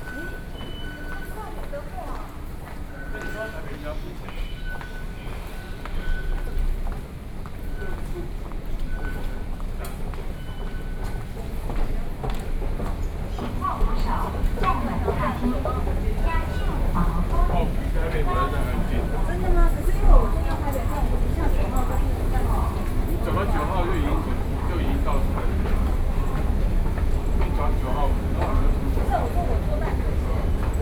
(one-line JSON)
{"title": "Saint Ignatius High School Station, Luzhou District - walking in the MRT station", "date": "2012-09-20 18:56:00", "description": "walking in the MRT station\nBinaural recordings, Sony PCM D50 + Soundman OKM II", "latitude": "25.08", "longitude": "121.48", "altitude": "15", "timezone": "Asia/Taipei"}